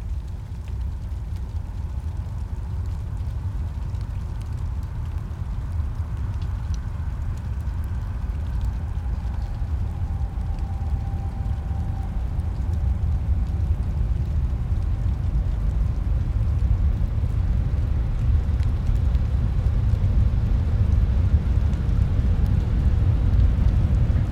Das Nasse Dreieck (The Wet Triangle), wildlife and the distant city in a secluded green space, once part of the Berlin Wall, Berlin, Germany - Long and heavy freight train
Followed by normal SBahn passenger trains.